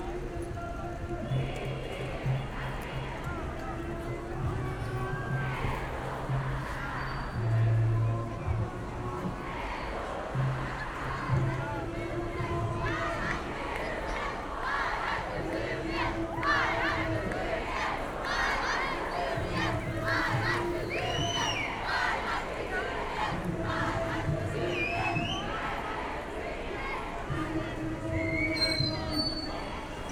demonstration pro Mursi and the muslim brotherhood, Berlin Kottbusser Damm, Saturday evening.
(Sony PCM D50, DPA4060)
Berlin, Germany, 24 August 2013